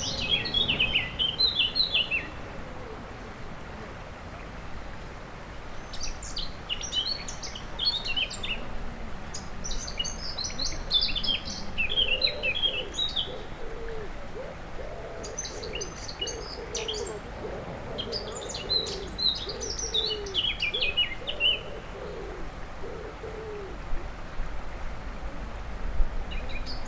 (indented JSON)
{
  "title": "Rue des Pélicans, Aix-les-Bains, France - Fauvettes",
  "date": "2022-07-12 11:30:00",
  "description": "Près du Sierroz allée Marcel Mailly, les fauvettes sont sans concurrence à cette époque, le niveau du Sierroz est très bas .",
  "latitude": "45.70",
  "longitude": "5.89",
  "altitude": "239",
  "timezone": "Europe/Paris"
}